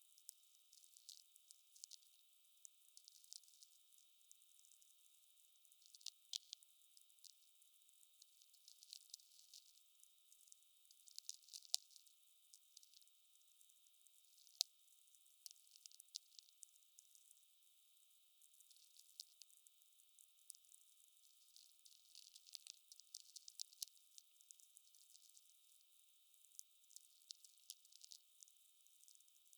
Lukniai, Lithuania, atmospheric radio (VLF)
VLF or atmospheric radio. distant lightnings received with handheld VLF receiver.